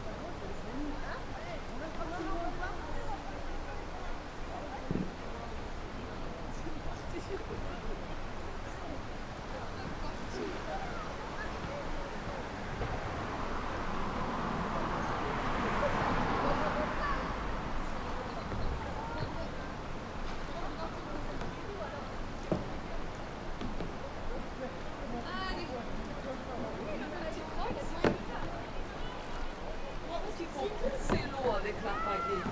Pont de la Côte de Clermont, Côte de Clermont, Clermont-le-Fort, France - Pont de la Côte
river, bird, walke, r water, kayaker
France métropolitaine, France, 25 September 2022, 15:20